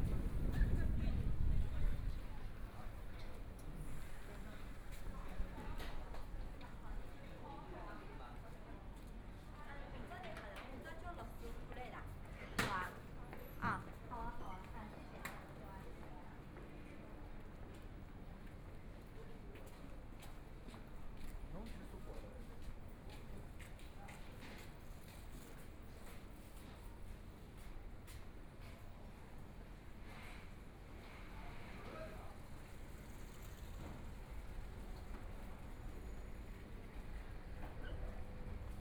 Wu Fu Nong, Shanghai - In the alley
Shopping street sounds, The crowd, Mall pedestrian zone, Walking into the alley, Binaural recording, Zoom H6+ Soundman OKM II